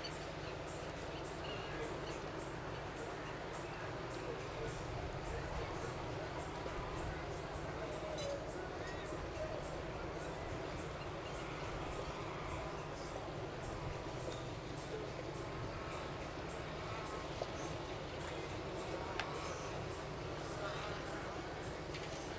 Ha-Hagana St, Acre, Israel - By the sea Acre
Sea, small waves, music, cafe, drone, murmur